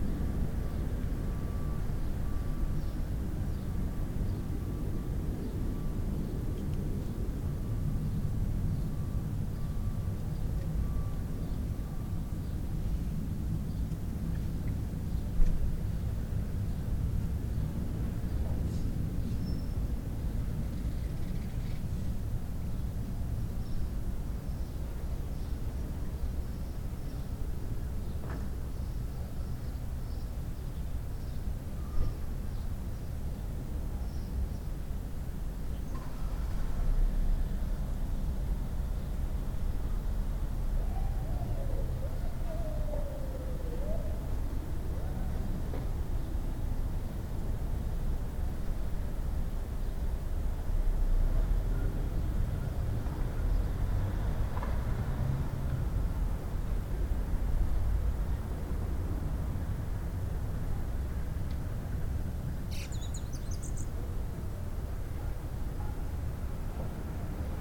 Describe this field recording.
This is the sound of a sparrow singing high up in the branches of what is now a dead tree. Sparrows are nesting in the roof of the house; they fly about the in a little squadron, belting out their rather tuneless peeps. Decided to go up a ladder and strap my recorder to a branch near to where they like to perch, in order to record their song more closely and hopefully hear them in a little more detail. Recorded with EDIROL R-09 cable-tied up in the tree.